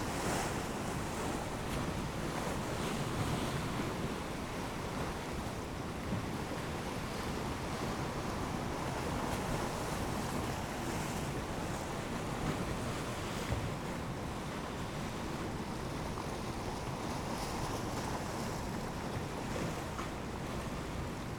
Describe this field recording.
east pier falling tide ... dpa 4060s clipped to bag to zoom h5 ...